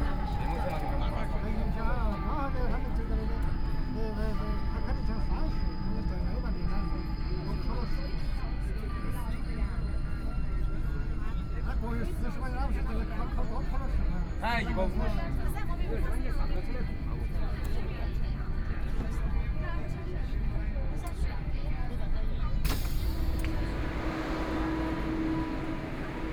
Jing'an District, Shanghai - Line 2 (Shanghai)
In the subway, Crowd, Voice message broadcasting station, Binaural recording, Zoom H6+ Soundman OKM II